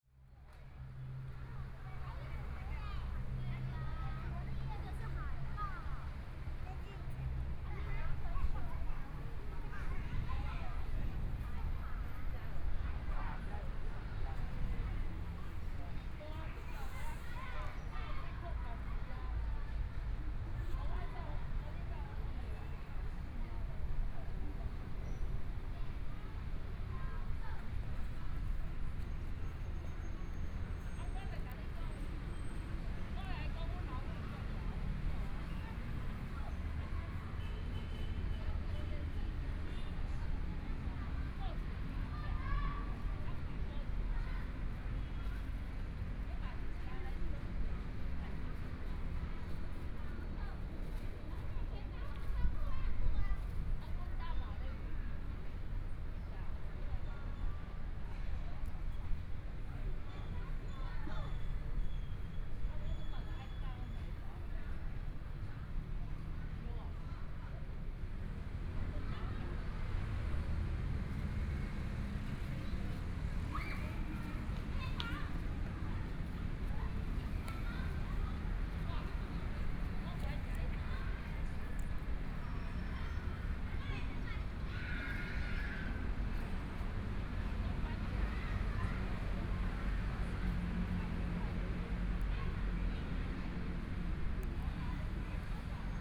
Wenhua Park, Beitou District - in the Park
Children's play area, Traffic Sound, Binaural recordings, Zoom H4n + Soundman OKM II
Beitou District, Taipei City, Taiwan, 19 January 2014